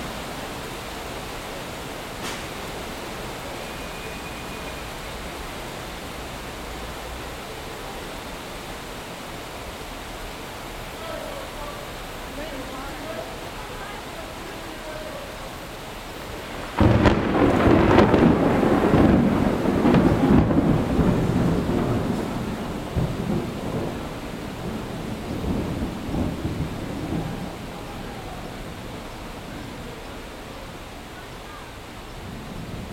Broadway, Brooklyn, NY, USA - M Train and Rain
Waiting for the M train on Marcy Avenue, Brooklyn.
Heavy rain and thunder.
Zoom h6
20 June 2019, ~03:00